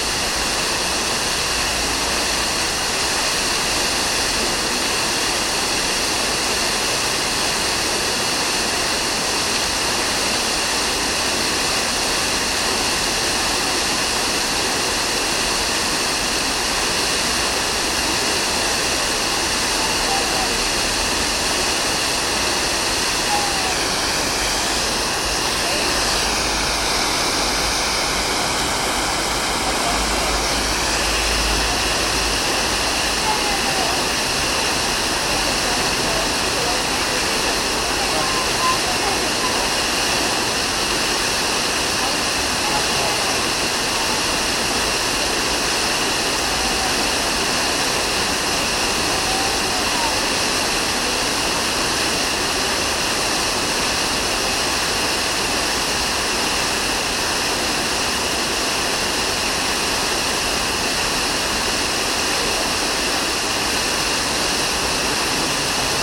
Fontanna Wielka w Park Ogrod Saski, Warszawa